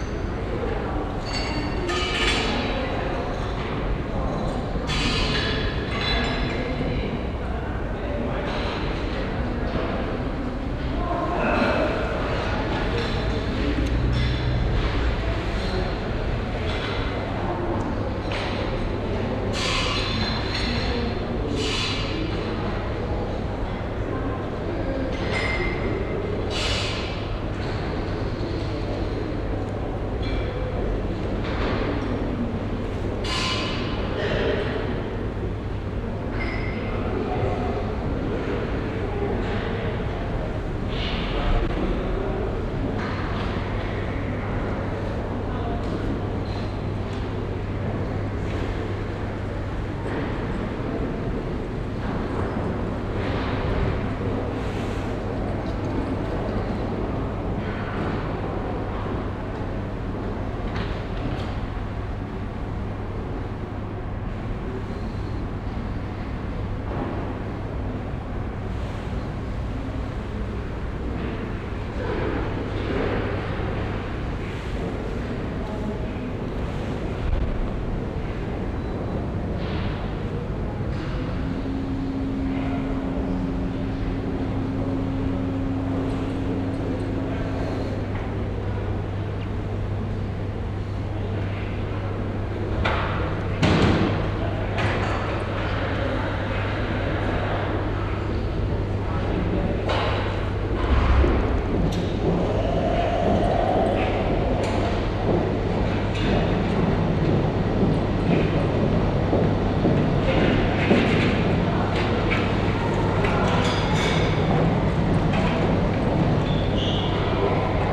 Golzheim, Düsseldorf, Deutschland, hall right - Düsseldorf, Ärztekammer Nordrhein, hall right
Inside one of the two main cube halls of the architecture. The sound of doors and steps reverbing in the high and huge glass and steel construction.
This recording is part of the exhibition project - sonic states
soundmap nrw - sonic states, social ambiences, art places and topographic field recordings